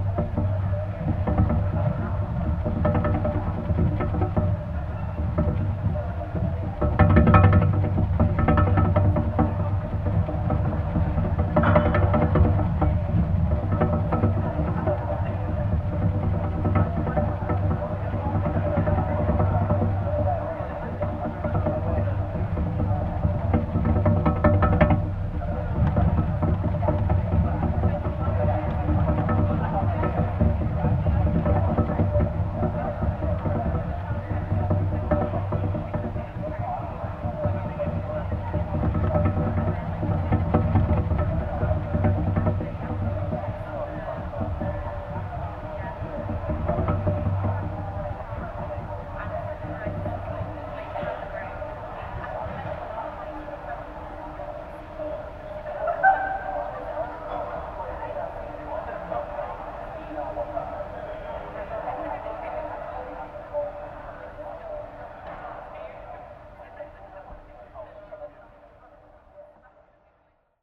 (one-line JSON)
{"title": "24 West Chiltern - Brookes Banner Flapping", "date": "2019-02-11 15:15:00", "description": "Waiting for students to return from a field recording exercise and finding the natural 'flapping' frequency of an advertising banner I'm sat next to in the cafe area. Mono contact mic recording (AKG C411) with SD MixPre6.", "latitude": "51.75", "longitude": "-1.22", "altitude": "98", "timezone": "GMT+1"}